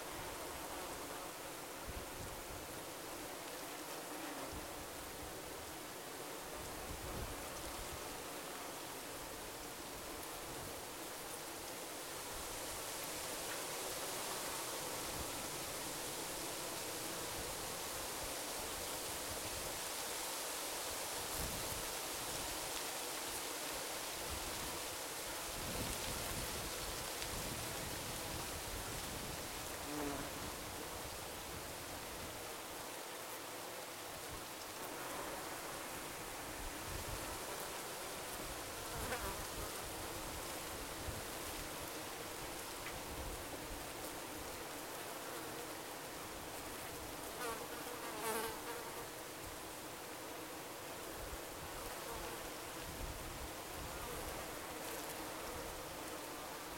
Riet, chorus of bees
Chorus of bees under a pear tree.
Vaihingen an der Enz, Germany, 21 August 2011, 11:00